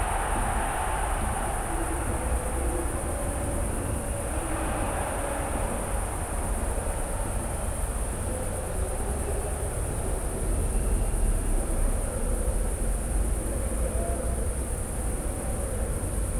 {"title": "Maribor, Mestni park - distant sounds from the soccer arena", "date": "2012-08-28 21:35:00", "description": "sounds from the nearby soccer arena, heard in Mestni park. Maribor plays against Zagreb.\n(PCM D-50, DPA4060)", "latitude": "46.56", "longitude": "15.65", "altitude": "284", "timezone": "Europe/Ljubljana"}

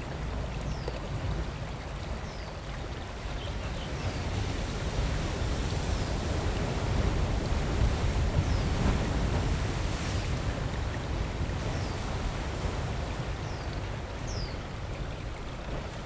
{"title": "頭城鎮外澳里, Yilan County - Sound of the waves", "date": "2014-07-07 13:11:00", "description": "On the coast, Sound of the waves, Very hot weather\nZoom H6+ Rode NT4", "latitude": "24.89", "longitude": "121.86", "altitude": "8", "timezone": "Asia/Taipei"}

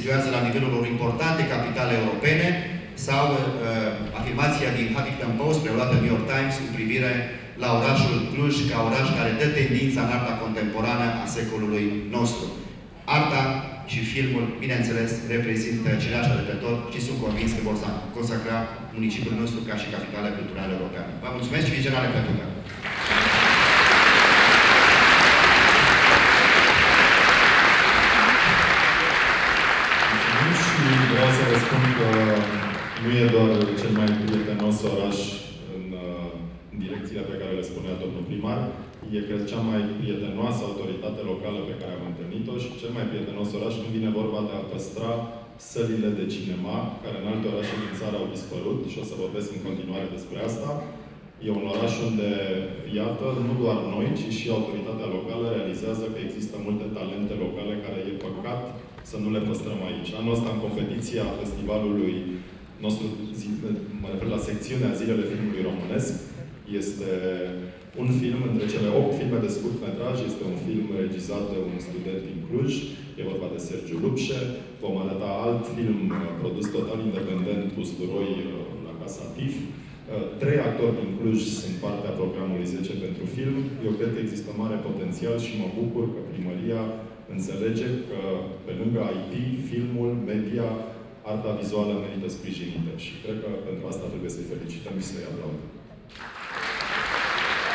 {"title": "Old Town, Klausenburg, Rumänien - cluj, case de cultura, TIFF opening 2014", "date": "2014-05-30 22:00:00", "description": "Anotherv recording at the opening event of the TIFF film festival inside the main hall of the centre cultural. The voice of the city mayor Emil Boc.\ninternational city scapes - field recordings and social ambiences", "latitude": "46.77", "longitude": "23.59", "altitude": "354", "timezone": "Europe/Bucharest"}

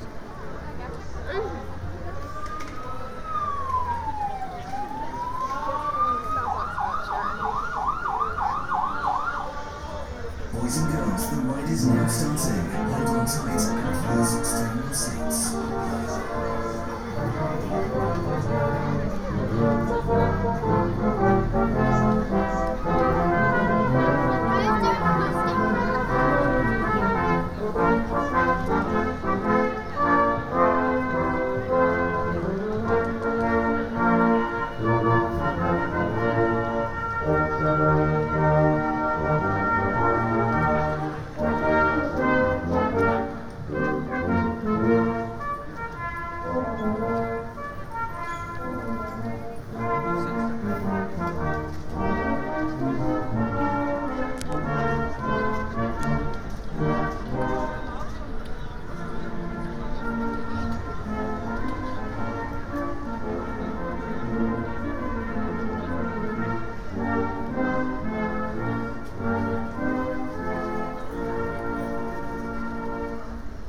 {"title": "Broad St, Reading, UK - Christmas on Broad Street Soundwalk (East to West)", "date": "2021-12-18 14:10:00", "description": "A short soundwalk along the pedestrianised section of Broad Street in Reading from east to west, passing the RASPO steel pan orchestra, buskers, small PAs on pop-up stalls and the local Salvation Army band. Binaural recording using Soundman OKM Classics and windscreen 'ear-muffs' with a Tascam DR-05 portable recorder.", "latitude": "51.46", "longitude": "-0.97", "altitude": "47", "timezone": "Europe/London"}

